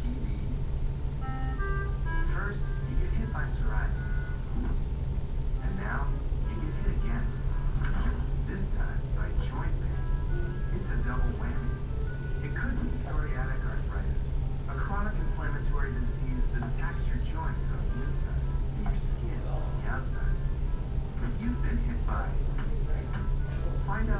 Quiet, carpeted room. Television on in the background. Stereo mic (Audio-Technica, AT-822), recorded via Sony MD (MZ-NF810).